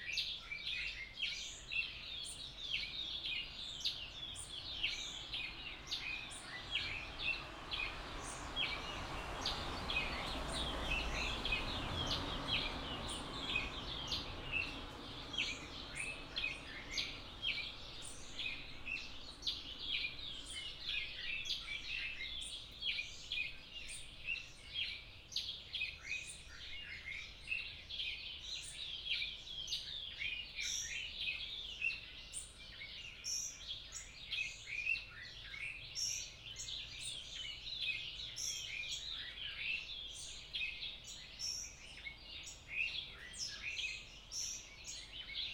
Melville, Johannesburg, South Africa - Pre-dawn to dawn at The Wedgwood in Melville, Johannesburg
While waiting to go to Limpopo, South Africa to stay in a reserve for 2 weeks as part of Francisco Lopez's & James Webb's 'Sonic Mmabolela' residency I have been staying at the Wedgwood in Johannesburg. On my first night there I was exhausted from not getting any sleep on the 15 hour flight from Sydney, Australia so I went to bed at 8pm and woke up at 4am. And since I was up early I thought I would record the dawn chorus outside my room. I think it is mainly some species of weaver bird that is calling with some ravens in the background occasionally. I think!
The recording stars when it was pitch black and ends after the light as emerged.
Recorded with a pair of Audio Technica AT4022's and an Audio Technica BP4025 into a Tascam DR-680, with the two different mic set ups about a metre apart.